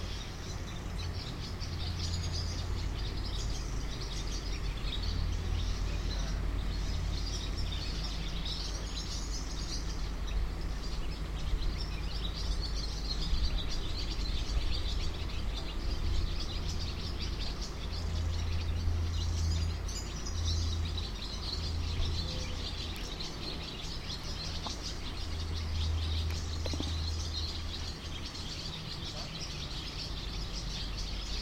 swallows at the trees near Malá chuchle
Velká Chuchle, Czech Republic